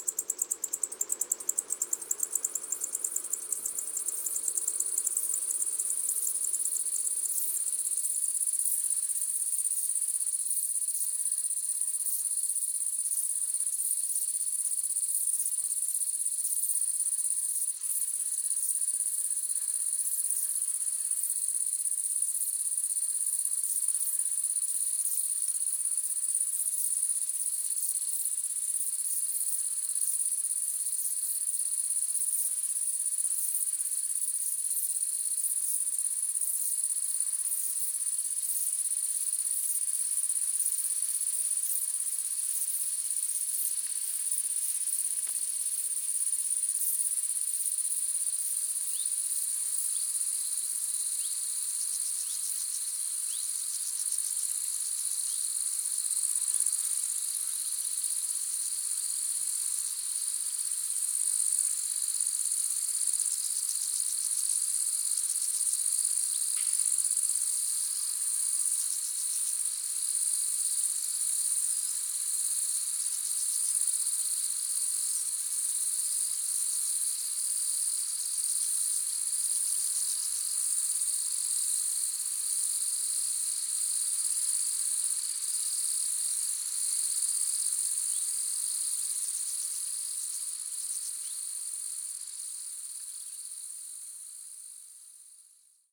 aubignan, vinese field, crickets
In the morning time nearby a vines field. The sun already hot. Mellow wind and the sound of the crickets.
international ambiences - topographic field recordings and social ambiences